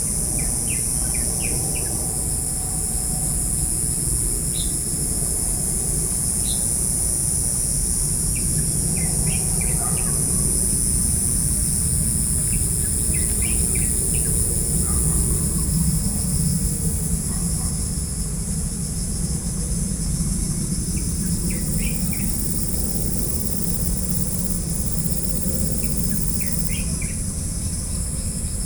Xizhi, New Taipei City - Nature sounds
Xizhi District, New Taipei City, Taiwan